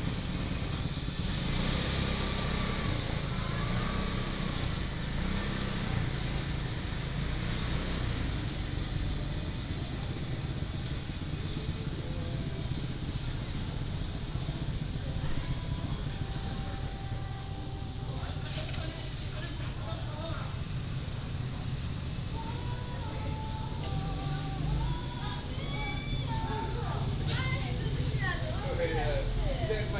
長濱村, Changbin Township - A small village in the morning
A small village in the morning, In the side of the road, Traffic Sound, Market, in the Motorcycle repair shop